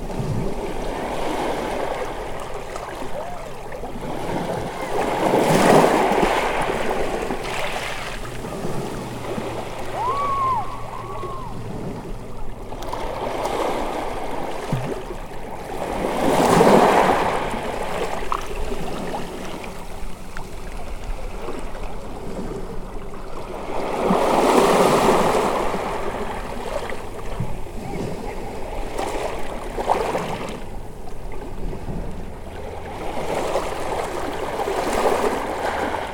Greystones Harbour, Rathdown Lower, Co. Wicklow, Ireland - The Sunken Hum Broadcast 102 - The Harbour in Greystones - 12 April 2013
A nice time watching the water coming in at the harbour greystones.
This is the 102nd broadcast of The Sunken Hum - my daily sound diary for 2013.